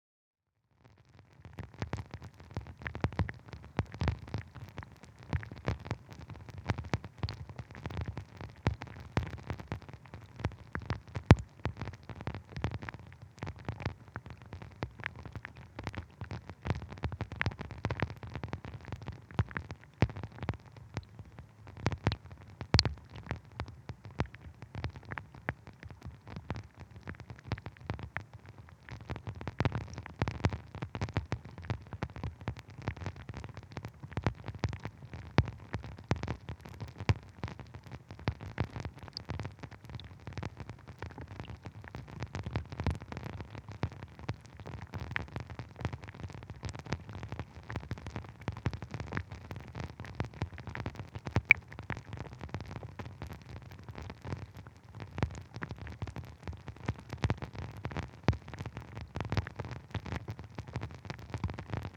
Walking Holme Drips
Drips landing in the river ofrom a pipe stickjing out the wall